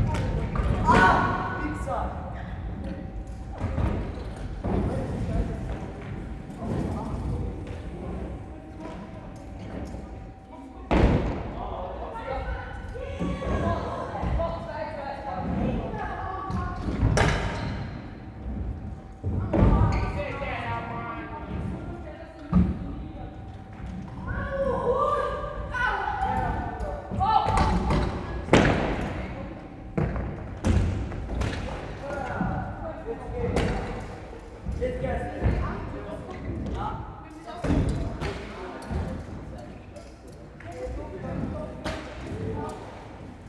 Hausleitnerweg, Linz, Austria - Skateboard heaven - scooters on resounding wooden curving slopes
The facilities for young people in this park are pretty good. The skateboard hall has a complex maze of curving, sloping surfaces to woosh down and up. It's all in wood and the spaces underneath resonate loudly. Great fun at €2,00 per session.
Oberösterreich, Österreich, 11 September, ~18:00